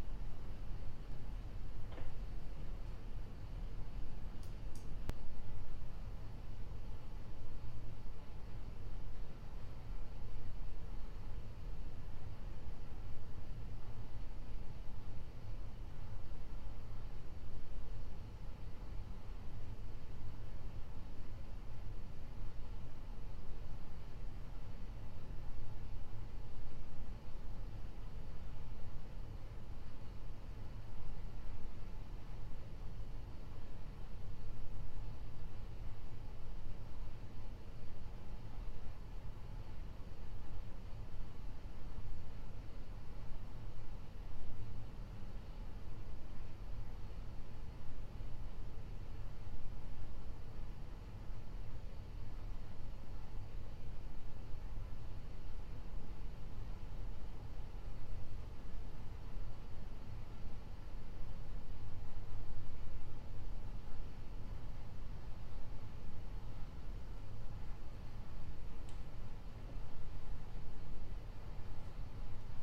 Vanderbilt University - inside an office with HVAC
Recording of the HVAC inside a university office
Tennessee, United States, 16 March 2020